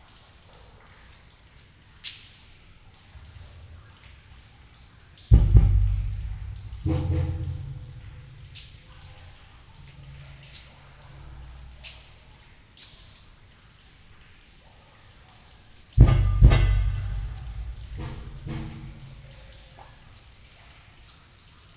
Valenciennes, France - Sewers soundscape
Sounds of the manholes, into the Valenciennes sewers. The traffic circle makes some redundant impacts.
24 December